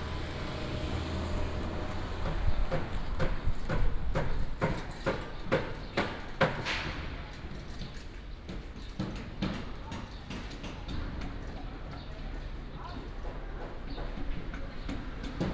Jiaxing Rd., Zhubei City - construction sound
Construction site construction sound, Traffic sound, sound of the birds